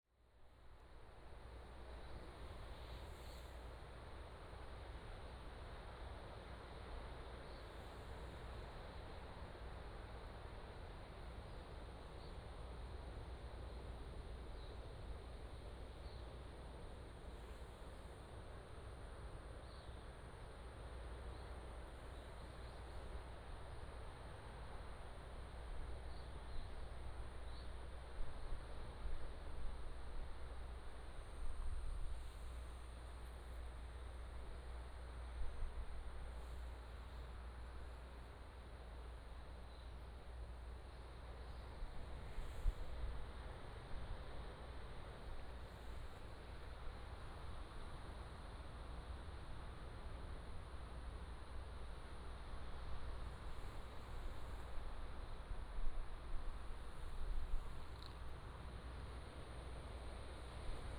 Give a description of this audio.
the waves, Binaural recordings, Sony PCM D100+ Soundman OKM II